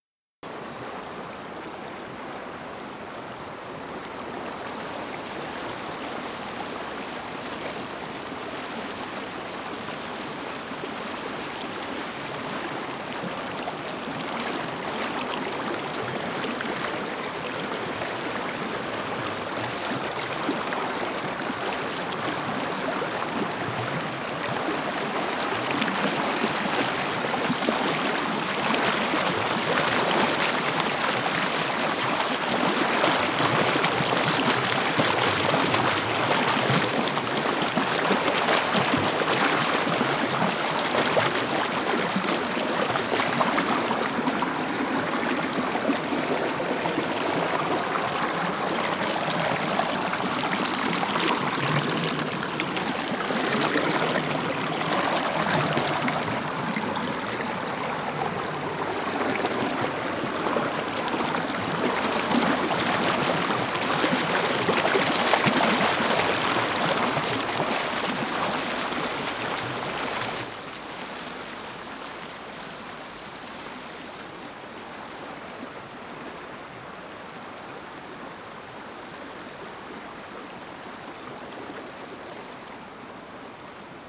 Pont en Royan la cascade blanche - leau
à 50 m de la cascade blanche l'eau poursuit son chemin au travers de branches et rochers qui tentent de lui faire obstacle
50 m from the white waterfall the water continues its way through branches and rocks that try to obstruct it